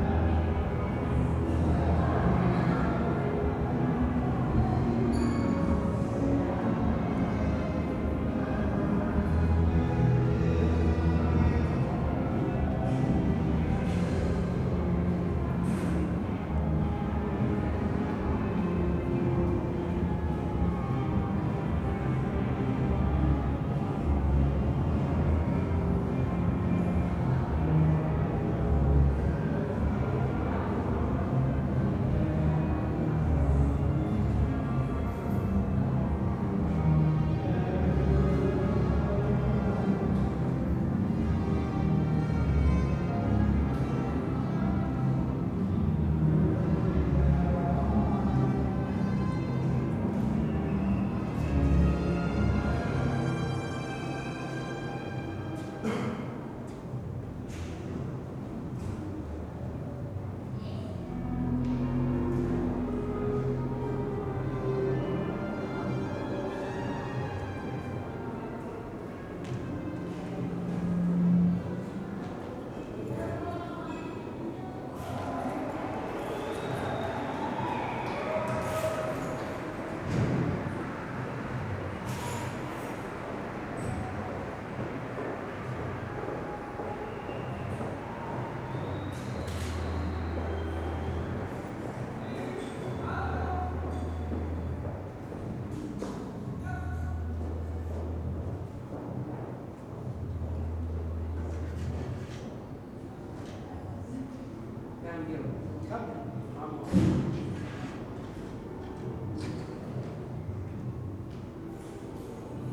berlin, john-foster-dulles-allee: haus der kulturen der welt - the city, the country & me: lobby of house of the cultures of the world
lobby during a concert of giant sand at wassermusik festival
the city, the country & me: august 5, 2011
Berlin, Germany, 5 August 2011, 21:02